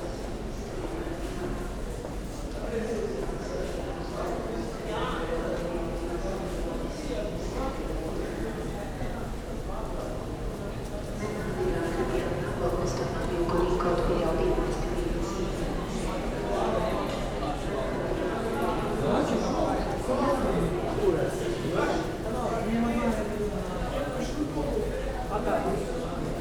Ljubljana main station - walk through pedestrian tunnel
walk in pedestrian tunnel at Ljubljana main station
Sony PCM D50, DPA4060)